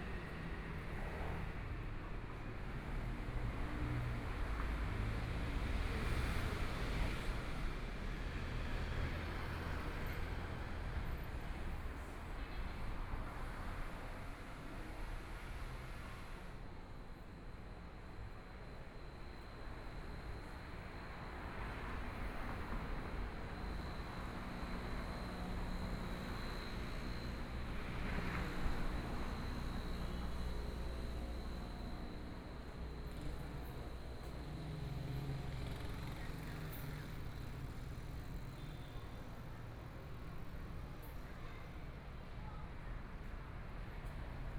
Nong'an St., Zhongshan Dist. - walking in the Street
walking in the Nong'an St.., Traffic Sound, toward Songjiang Rd., Binaural recordings, Zoom H4n+ Soundman OKM II